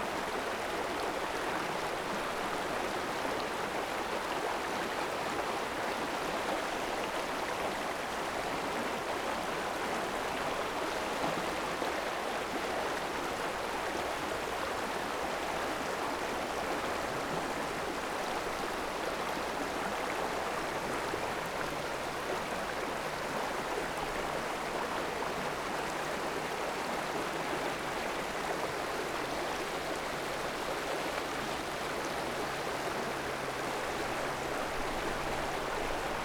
Biesdorf, Berlin, Deutschland - river Wuhle flow
Berlin, Wuhletal, river Wuhle flow, near S-Bahn station
(SD702, SL502 ORTF)